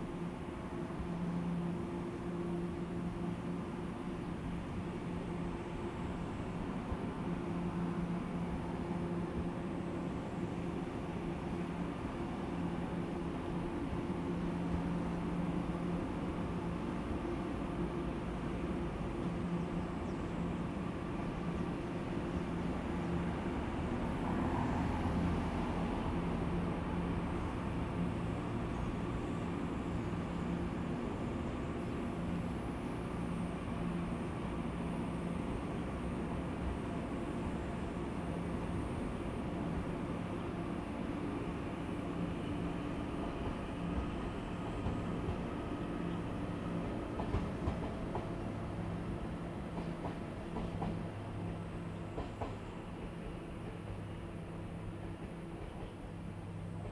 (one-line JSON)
{"title": "富里村, Fuli Township - Near a small station", "date": "2014-09-07 14:32:00", "description": "Near a small station, Traffic Sound, Train arrival and departure, Very hot weather\nZoom H2n MS+ XY", "latitude": "23.18", "longitude": "121.25", "altitude": "227", "timezone": "Asia/Taipei"}